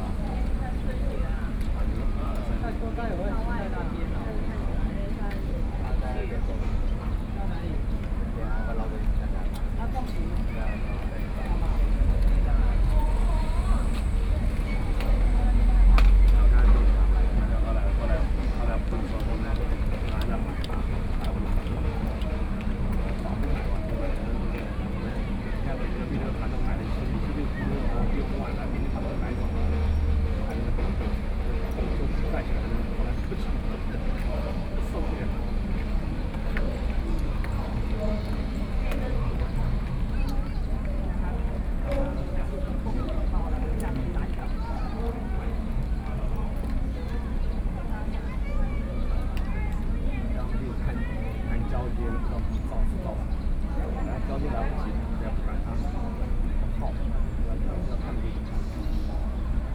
100台灣台北市中正區東門里 - Hot noon
Visitors taking a break chat, Sony PCM D50 + Soundman OKM II
Zhongzheng District, Taipei City, Taiwan, 18 August 2013